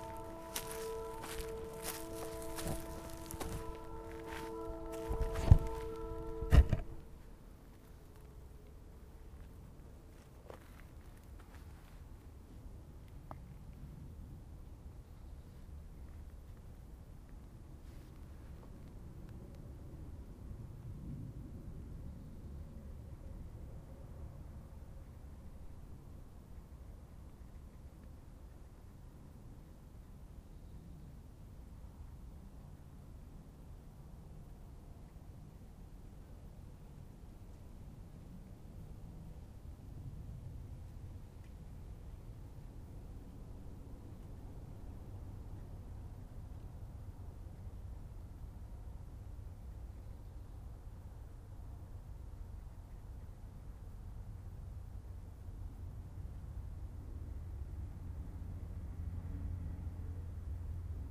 the horn is heard! goodbye! see you!
NM, USA